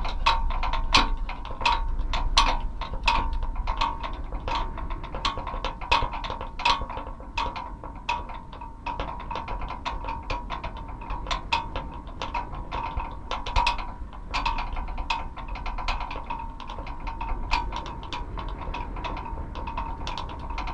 Pilėnų g., Ringaudai, Lithuania - Street light pole Nr.46
Composite 4 contact microphones recording of a street light pole with some loose wires tumbling polyrhythmically inside. During stronger gushes of wind, the pole is vibrating more and the wires inside begin screeching loudly.
Kauno apskritis, Lietuva